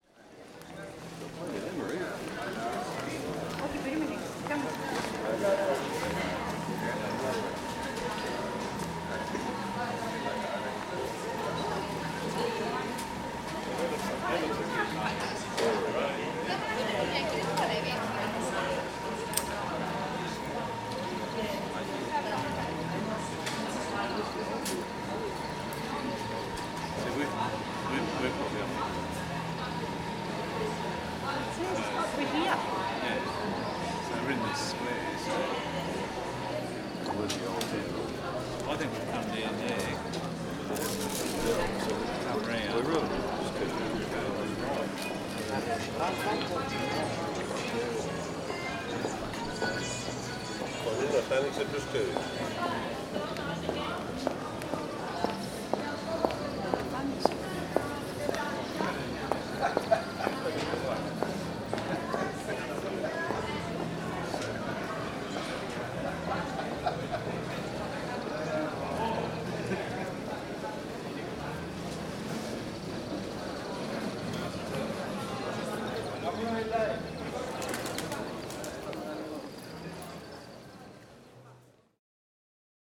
Nikiforou Theotoki, Corfu, Greece - Vrachlioti Square - Πλατεία Βραχλιώτη (Μπίνια)
Tourists and local people are passing by or chatting.